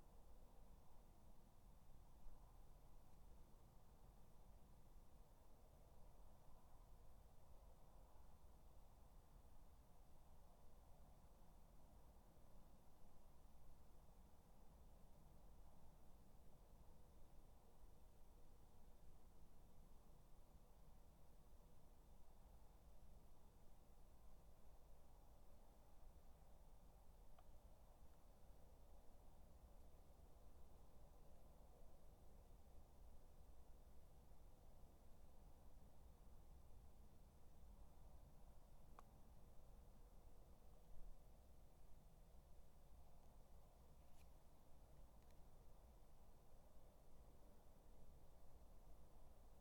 Dorridge, West Midlands, UK - Garden 20
3 minute recording of my back garden recorded on a Yamaha Pocketrak